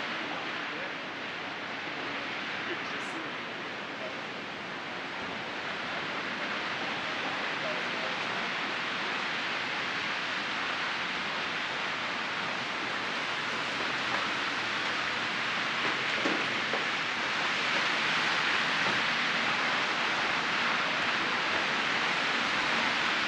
{
  "title": "Rue Sainte-Catherine O, Montréal, QC, Canada - Montreal Xpodium",
  "date": "2020-12-30 16:15:00",
  "description": "Recording between Rue de la Montagne & Drummond Street on Saint-Catherine St. Montreal built a tiny platform that allows you to walk onto each sidewalk. As pedestrians walk onto the metal stairs and platform, you hear each of their footsteps. While standing over passing vehicles.",
  "latitude": "45.50",
  "longitude": "-73.57",
  "altitude": "46",
  "timezone": "America/Toronto"
}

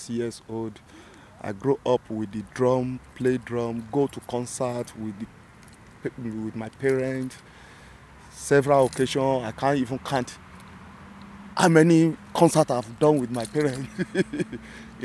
{
  "title": "Nordring, Hamm, Germany - To be here as a black man....",
  "date": "2011-07-18 19:05:00",
  "description": "We are sitting with Yemi under a tree in the “Nordring”, a city park. After the first couple of minutes talking and recording, it begins to rain heavily. We find rescue in a little wood house on the playground nearby… Nigerian artist, drummer, educator, cultural producer and activist, Yemi Ojo, now at home in Germany, tells us where he’s coming from… His “cultural baggage”, his drumming and music, was and is his key in building a new life here and now …\n(this recording was later remixed in the radio piece FREEWAY MAINSTREAM broadcast on WDR-3 Studio Akustische Kunst in May 2012)",
  "latitude": "51.68",
  "longitude": "7.82",
  "altitude": "63",
  "timezone": "Europe/Berlin"
}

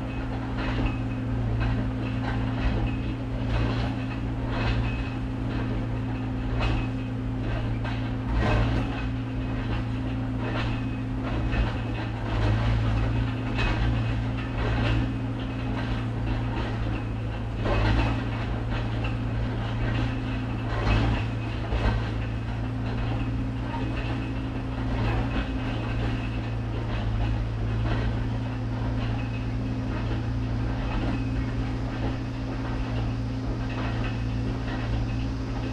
{
  "title": "neoscenes: Willow Creek cicadas and construction",
  "date": "2011-08-08 12:52:00",
  "latitude": "34.55",
  "longitude": "-112.47",
  "altitude": "1620",
  "timezone": "America/Phoenix"
}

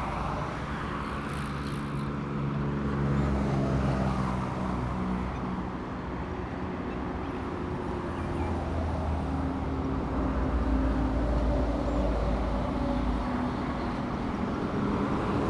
{
  "title": "Binnan Rd., 台南市南區喜北里 - Traffic and birds sound",
  "date": "2017-02-18 12:10:00",
  "description": "Traffic and birds sound\nZoom H2n MS+ XY",
  "latitude": "22.95",
  "longitude": "120.18",
  "altitude": "6",
  "timezone": "GMT+1"
}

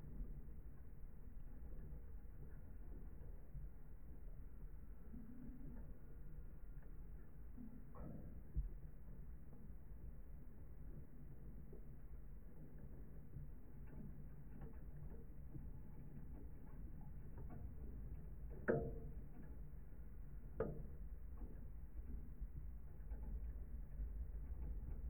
{
  "title": "Lithuania, Kernave, metallic fence",
  "date": "2017-07-08 16:25:00",
  "description": "contact microphones on fence",
  "latitude": "54.89",
  "longitude": "24.86",
  "altitude": "114",
  "timezone": "Europe/Vilnius"
}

